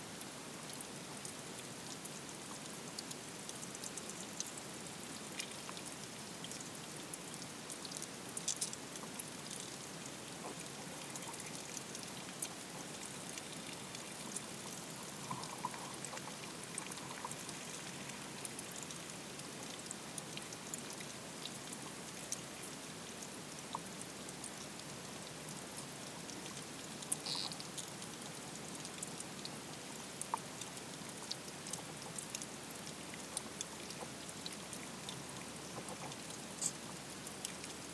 Ardmaghbreague, Co. Meath, Ireland - AMT - underwater creatures
underwater activity in black contaiuner destined for cows to drink on the edge of the field, very hot afternoon. equip.: SD 722 + hydrophone CRT C55.
25 July 2014